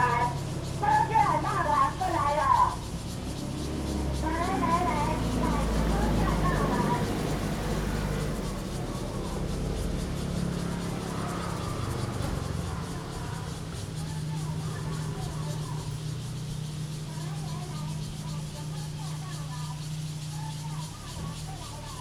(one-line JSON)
{"title": "Taifeng Rd., Taimali Township - Small village", "date": "2014-09-05 10:47:00", "description": "Small village, Cicadas and traffic sound, The weather is very hot\nZoom H2n MS +XY", "latitude": "22.61", "longitude": "121.00", "altitude": "30", "timezone": "Asia/Taipei"}